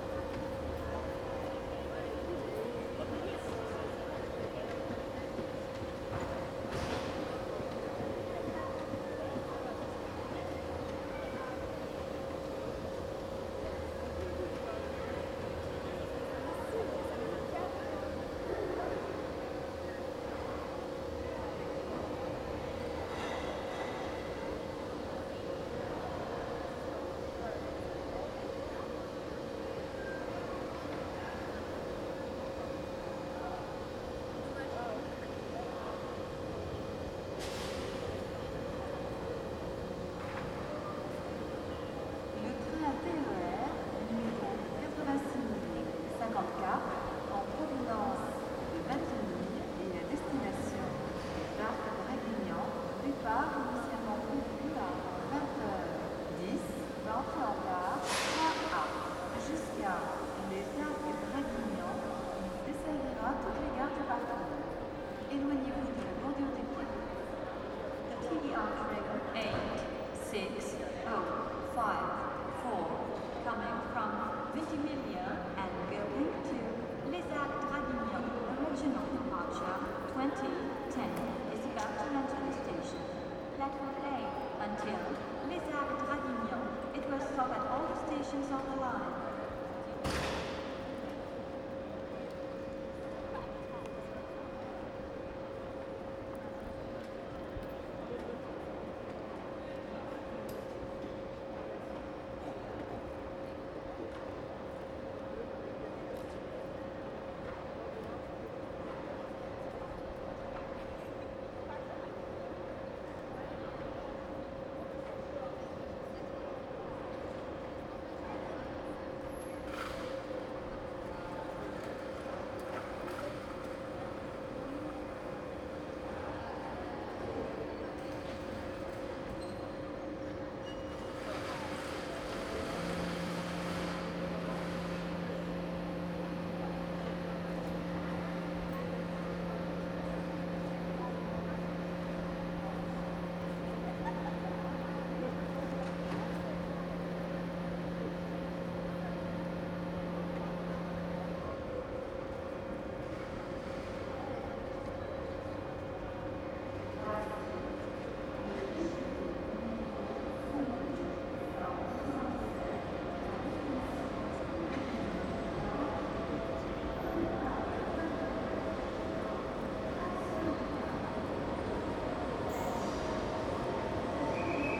Gare Thiers, Nice, France - Train arriving
Location: Platform 1
Direction: facing North West
Recorder: ZOOM H1